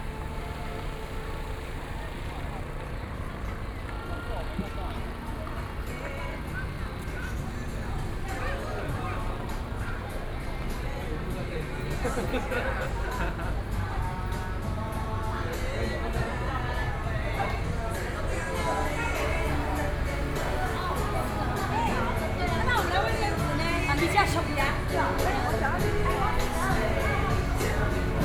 湯圍溝溫泉公園, Jiaosi Township - Hot Springs Park
walking in the Hot Springs Park
Sony PCM D50+ Soundman OKM II